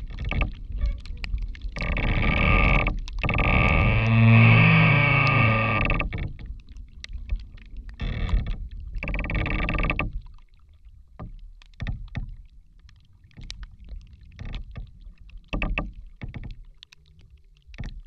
Šlavantai, Lithuania - Creaking rotten tree
A rotten tree, creaking and squeaking from being moved by the wind. A slight rain is also heard falling on the tree's surface, but it all calms down towards the end. Recorded with 4 contact microphones and ZOOM H5.
Alytaus apskritis, Lietuva, 19 March 2021, 17:30